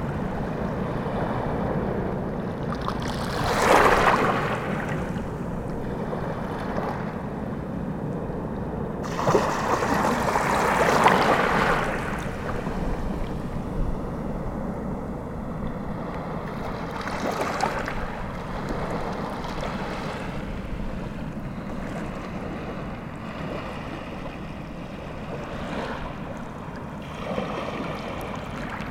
Blankenberge, Belgique - The sea
Recording of the sea near a jetty. A medical helicopter is passing.
Blankenberge, Belgium, November 2018